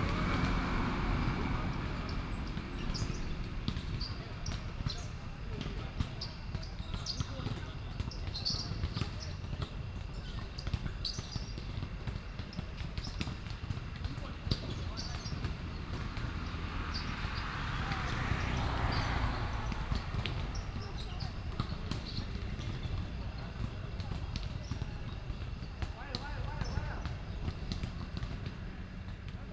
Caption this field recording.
Basketball court, Insect sounds, Traffic Sound